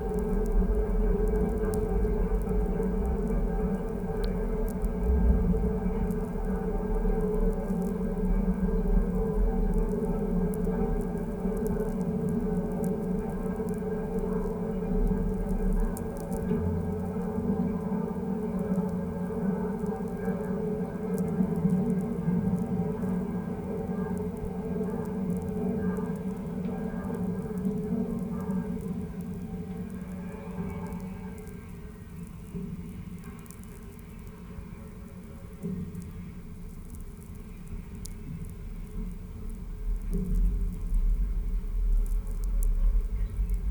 Anykščiai, Lithuania, railway bridge unheard
sound exploration of old railway bridge: contact microphones and electromagnetic antenna